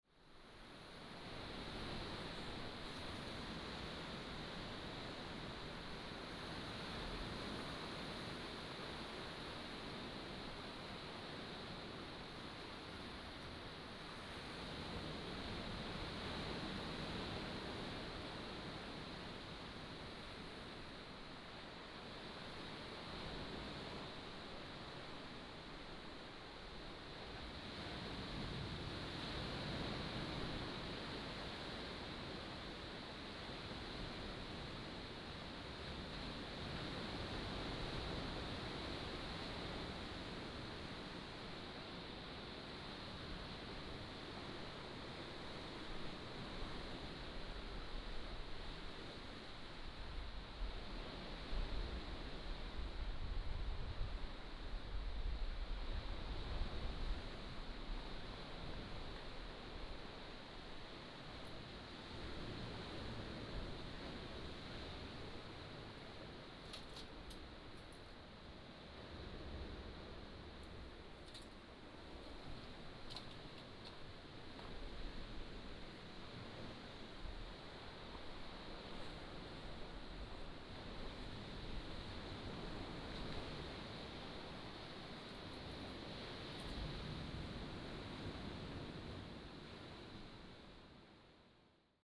On the coast, Facing hillside, sound of the waves